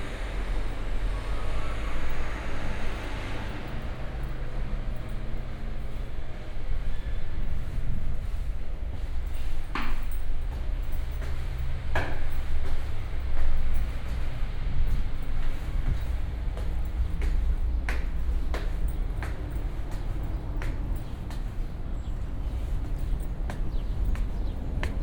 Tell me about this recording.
kind of vertical soundwalk over 3 stories from ground level in the park to the underground garage levels. i forgot to switch of my phone, so at about 45sec the mobile disturbs the recording. i have left it in because it indicates also the change of network cells, while changing the city layers.